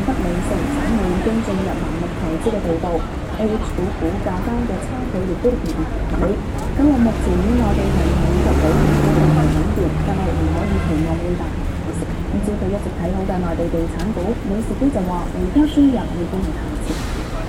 T.V. in Hennessy Road, WanChai, Hong-Kong, 2007